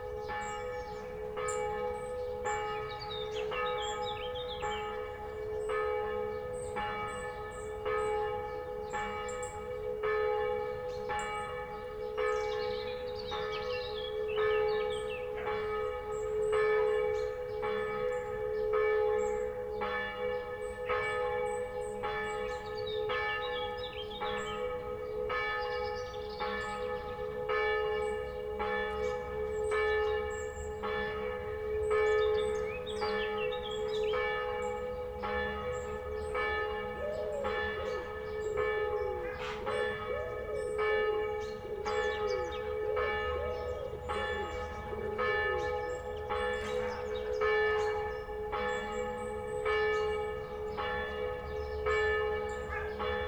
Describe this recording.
What is interesting in this recording is the changing tone of the bells after the initial three-ring signature. I imagine a different hammer/clapper is used to achieve the slightly 'phasey' and duller sounding ring for the continuous tolling that follows. Oh and at the beginning you can here the rustle of a field mouse curious as to what I was doing - very sweet!